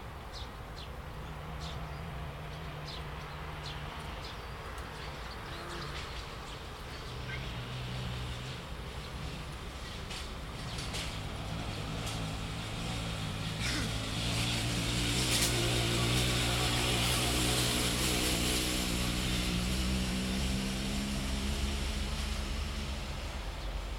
Звуки птиц, велосипедист, атмосферные звуки
Запись ZoomH2n
Kostiantynivka, Donetska oblast, Ukraine